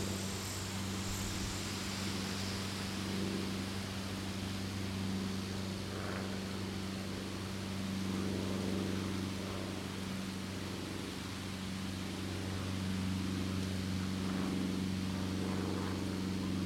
Bluff View, Glencoe, Missouri, USA - Bluff View
Symphony of cicadas, wind blowing through the trees, airplanes and murmurs